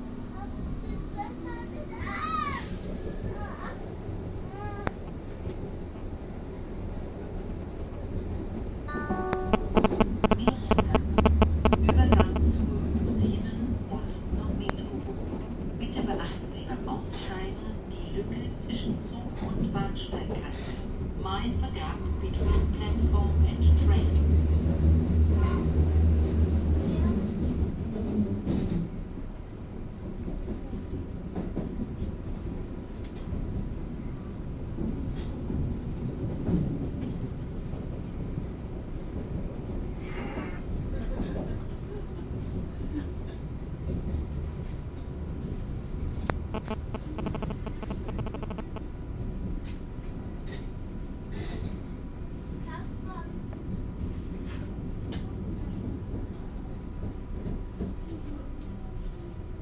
Kreuzberg, Berlin, Deutschland - tube
ride with Berlin tube, 2 stations, from "Kochstraße" southward. "h2 handyrecorder".
Berlin, Germany